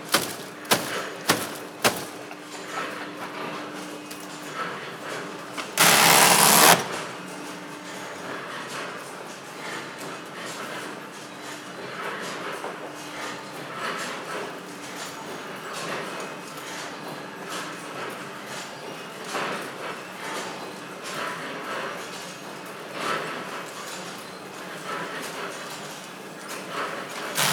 Jero Papier, Paper factory, Binckhorst Den Haag - Jero Papier
Machines stamping and cutting forms out of paper and cardboard. recorded with Sony D50, 90 degrees stereo. Thanks to Sandro.
Laak, The Netherlands